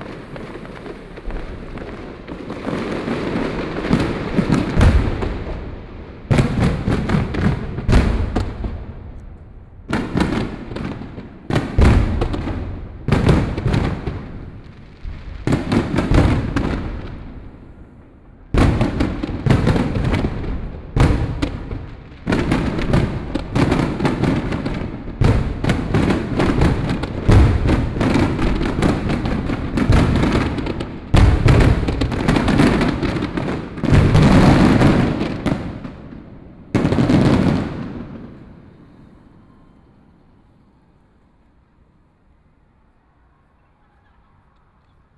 Lishan St., Taipei City - Distance came the sound of fireworks
Distance came the sound of fireworks, Traffic Sound
Please turn up the volume a little. Binaural recordings, Sony PCM D100+ Soundman OKM II
Neihu District, Taipei City, Taiwan, 12 April 2014, ~21:00